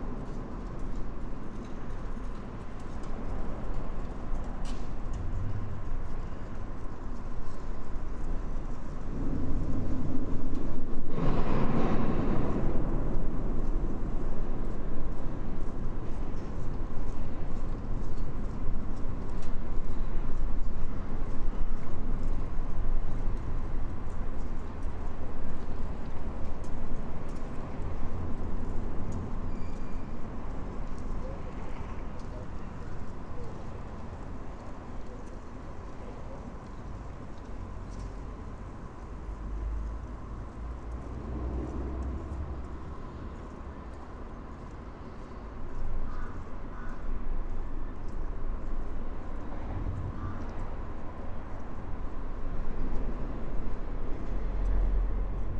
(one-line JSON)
{"title": "Vilnius, Lithuania, standing under Liubertas bridge", "date": "2021-03-03 14:15:00", "description": "Standing under bridge, listening to cityscape.", "latitude": "54.69", "longitude": "25.26", "altitude": "80", "timezone": "Europe/Vilnius"}